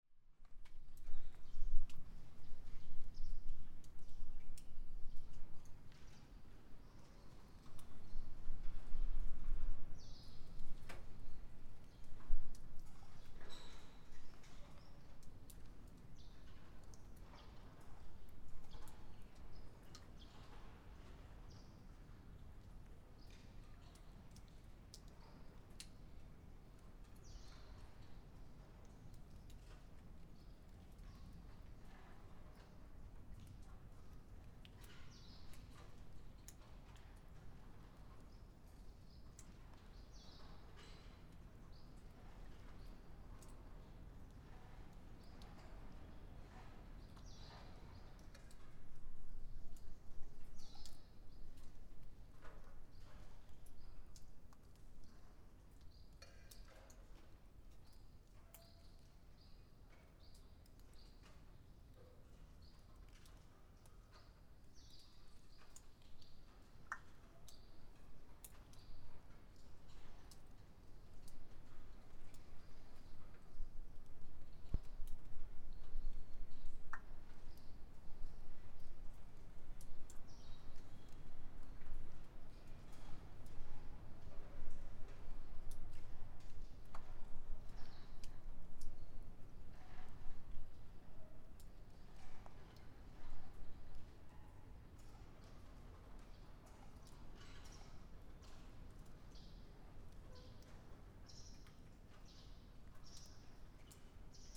Buzludzha, Bulgaria, inside hall - Buzludzha, Bulgaria, large hall 1
Buzlduzha, "House of the Communist Party", is now a ruin with a lot of sounds. The roof is incomplete, water is dropping, but the acoustic of the hall is still audible by the distant echos